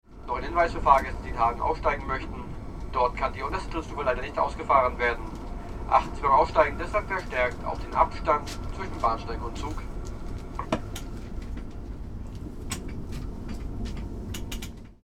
28.10.2008 20:00 ICE Köln -> Berlin